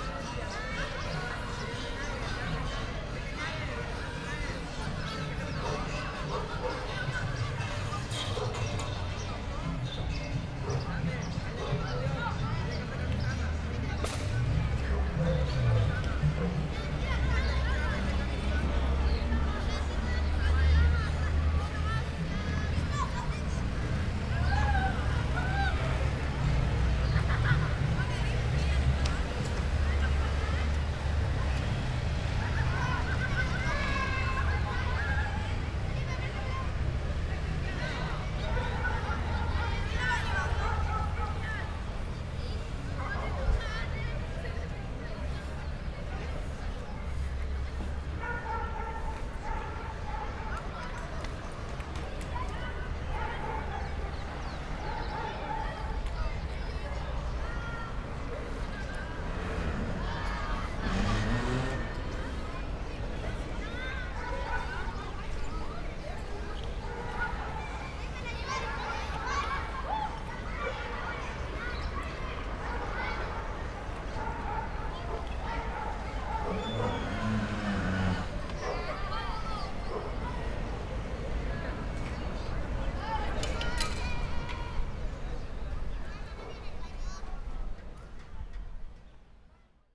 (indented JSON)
{
  "title": "hill above Plaza el Descanso, Valparaíso - ambinence heard on hill above plaza",
  "date": "2015-12-02 11:20:00",
  "description": "ambience on a small hill (called Pompeij) above Plaza el Descanso, gas truck, school (they've played a march in the yard), dogs, etc.\n(Sony PCM D50, DPA4060)",
  "latitude": "-33.04",
  "longitude": "-71.63",
  "altitude": "51",
  "timezone": "America/Santiago"
}